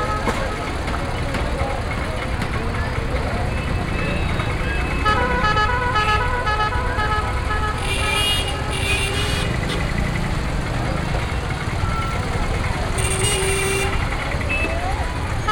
Bijapur, Central Market, Above the market
India, Karnataka, Bijapur, Market, Horn, crowd, road traffic, binaural
Karnataka, India